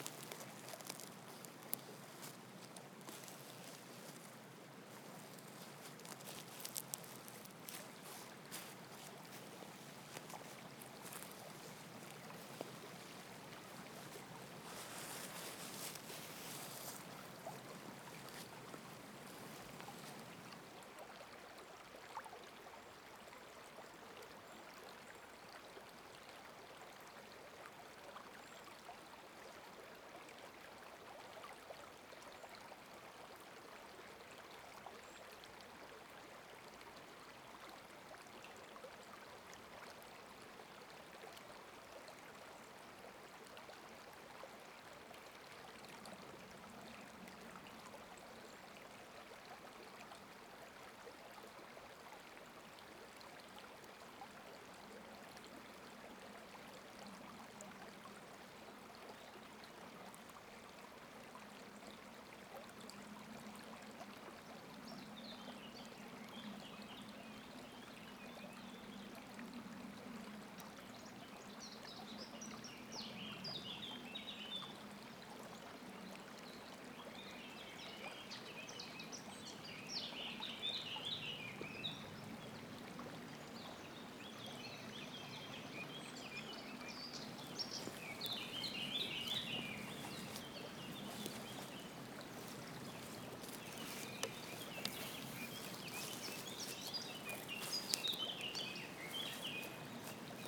Unnamed Road, Pont-de-Montvert-Sud-Mont-Lozère, France - SoundWalk in Forest Lozère 2020
Janvier 2020 - Lozère
SoundWalk forest winter river and wind in the summits
ORTF DPA 4022 + Rycotte + PSP3 AETA + edirol R4Pro
7 January 2020, 15:15